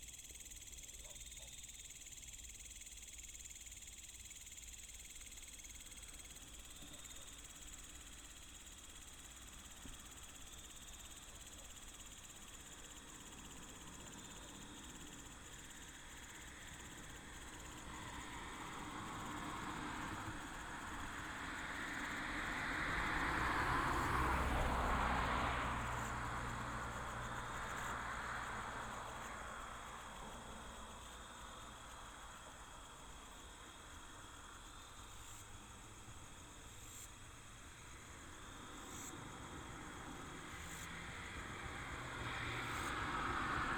Beside the reservoir, traffic sound, Insect beeps, Dog Barking, Binaural recordings, Sony PCM D100+ Soundman OKM II
1 November 2017, 20:19